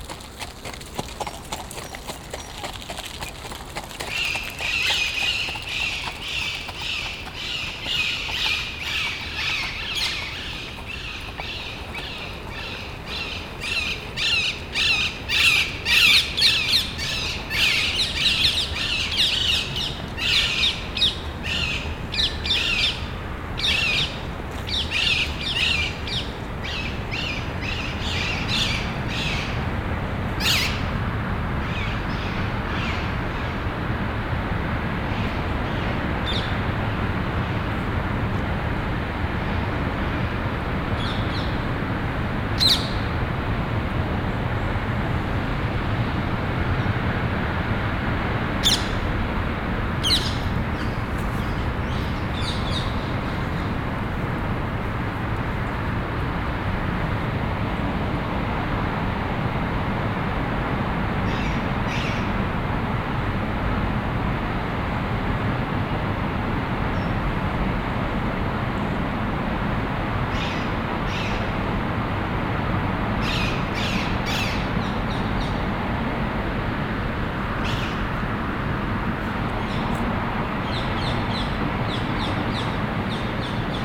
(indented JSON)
{
  "title": "cologne, stadtgarten, hochzeitskutsche auf weg - kcologne, stadtgarten, hochzeitskutsche auf weg",
  "date": "2008-05-02 15:15:00",
  "description": "stereofeldaufnahmen im september 07 nachmittags\nproject: klang raum garten/ sound in public spaces - in & outdoor nearfield recordings",
  "latitude": "50.95",
  "longitude": "6.94",
  "altitude": "-1",
  "timezone": "Europe/Berlin"
}